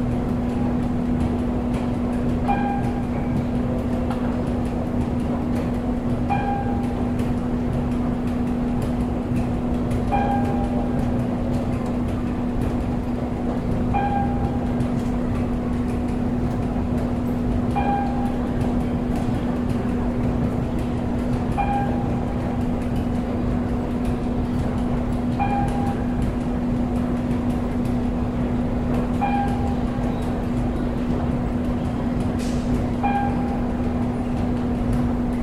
Hong-Kong::Sonar Subway, Causeway Bay Station
广东, 中华人民共和国/China